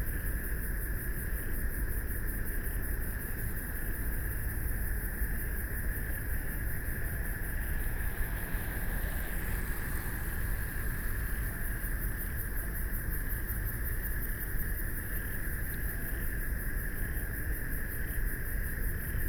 北投區關渡里, Taipei City - Environmental sounds
Frogs sound, Traffic Sound, Environmental Noise, Bicycle Sound, Pedestrians walking and running through people
Binaural recordings
Sony PCM D100+ Soundman OKM II SoundMap20140318-6)